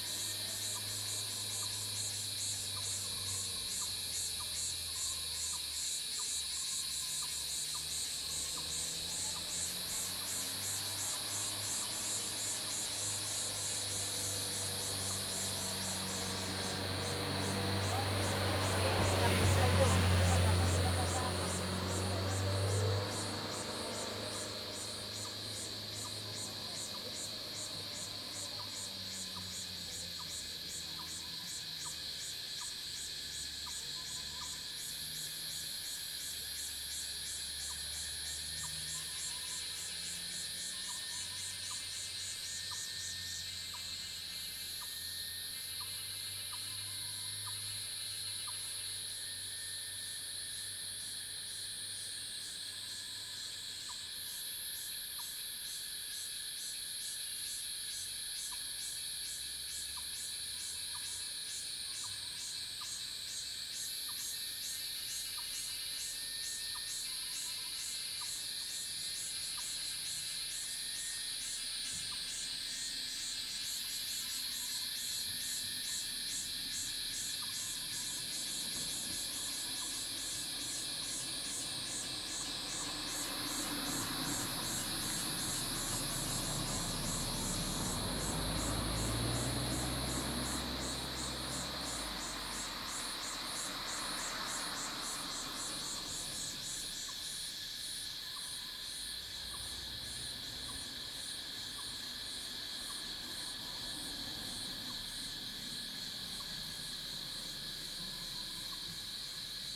Cicada sounds, Bird sounds, In the morning
Zoom H2n MS+XY